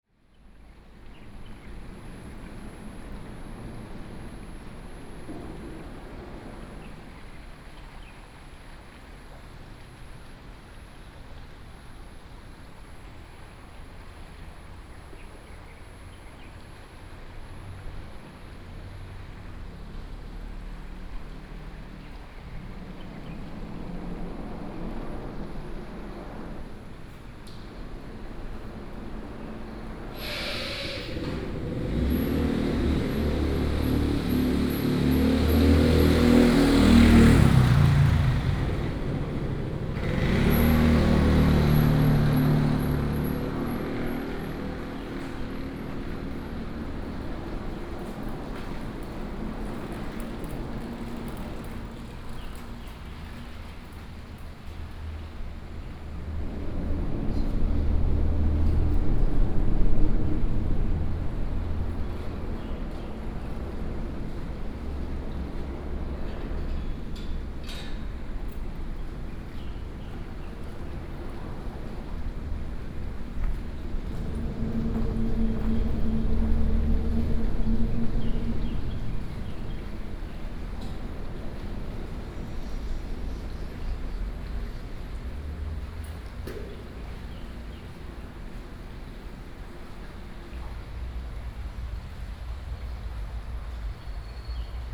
頭城鎮金盈里, Yilan County - Under the bridge
Under the bridge, The sound of water, Traffic Sound, Birdsong, Very hot weather
Yilan County, Taiwan, 7 July 2014, 16:29